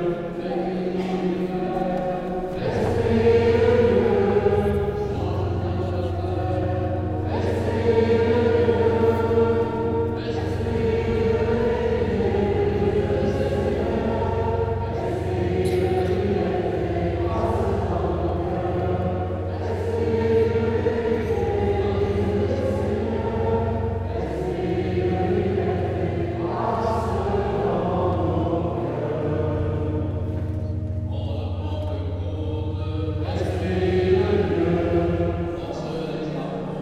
Saint-Martin-de-Ré, France - Mass at St-Martin de Ré
Recording of the beginning of the traditional mass in the St-Martin de Ré church. Good luck to everyone who want to listen to this !
20 May 2018